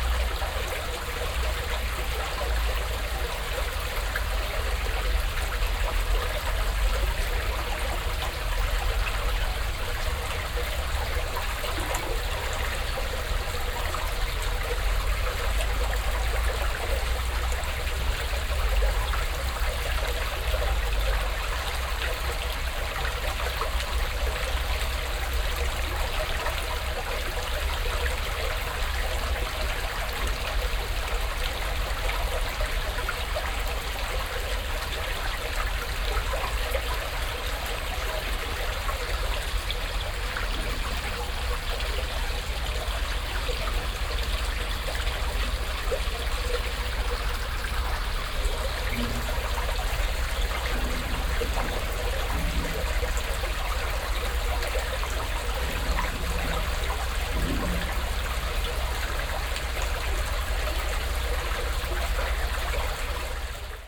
refrath, stadtpark, holzbrücke - refrath, stadtpark, unter holzbrücke
morgens an kleinem bach unter holzbrücke, das gluckern und plätschern des wassers ein fussgänger überquert die brücke
soundmap nrw - social ambiences - sound in public spaces - in & outdoor nearfield recordings